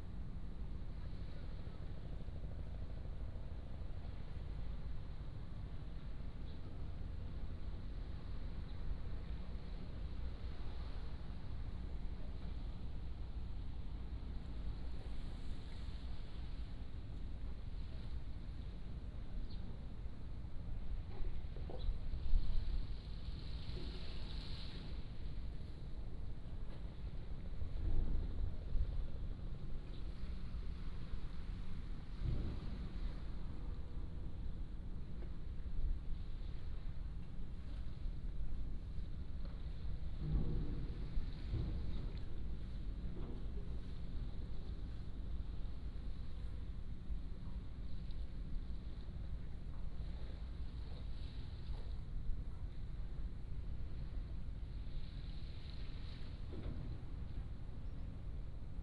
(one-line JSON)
{"title": "龍門漁港, Huxi Township - Next to the pier", "date": "2014-10-21 10:25:00", "description": "Next to the pier", "latitude": "23.56", "longitude": "119.68", "altitude": "3", "timezone": "Asia/Taipei"}